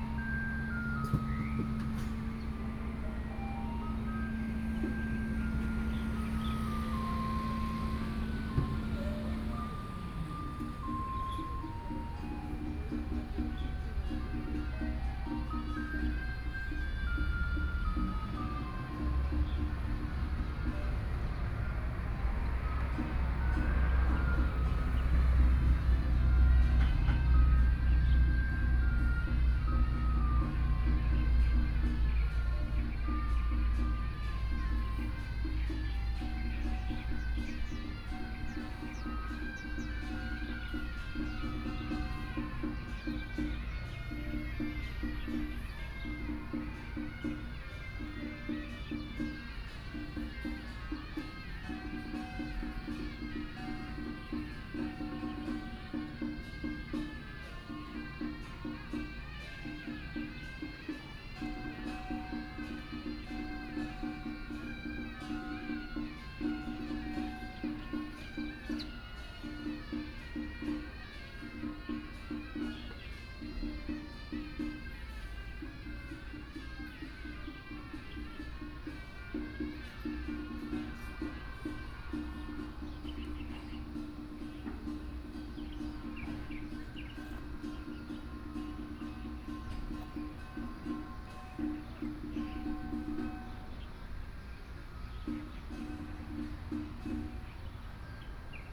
蘇澳鎮港邊里, Yilan County - Small village
In the square, Funeral, Hot weather, Traffic Sound, Birdsong sound, Small village, Garbage Truck